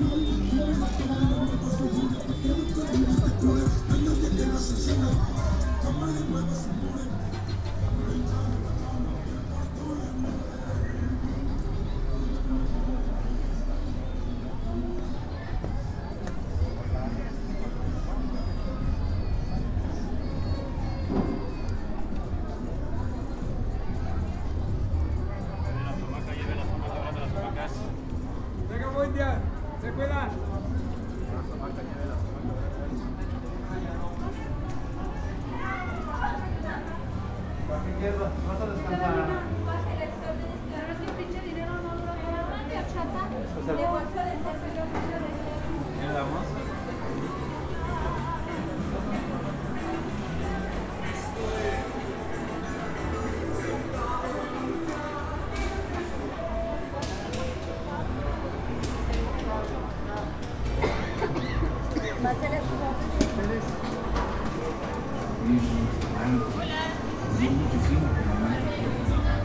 {"title": "Av. Miguel Alemán, Centro, León, Gto., Mexico - Walking by Miguel Aleman avenue towards Aldama Market, then inside the market and going out.", "date": "2021-09-08 14:43:00", "description": "I made this recording on September 8th, 2021, at 2:43 p.m.\nI used a Tascam DR-05X with its built-in microphones and a Tascam WS-11 windshield.\nOriginal Recording:\nType: Stereo\nCaminando por la Av. Miguel Alemán desde casi Blvd. Adolfo López Mateos hacia el Mercado Aldama, y luego caminando adentro y saliendo.\nEsta grabación la hice el 8 de septiembre de 2021 a las 14:43 horas.", "latitude": "21.12", "longitude": "-101.69", "altitude": "1803", "timezone": "America/Mexico_City"}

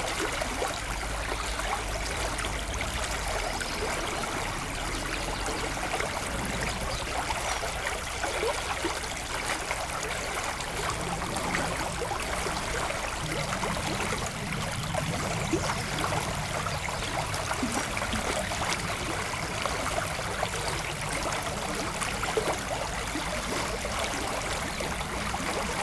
{"title": "Oud-Heverlee, Belgium - The Nethen river", "date": "2018-03-29 11:00:00", "description": "The Nethen river flowing quietly in a small and bucolic landscape. The funny fact is that this recording is made on the linguistic border, north speaking dutch, south speaking french.", "latitude": "50.79", "longitude": "4.66", "altitude": "34", "timezone": "Europe/Brussels"}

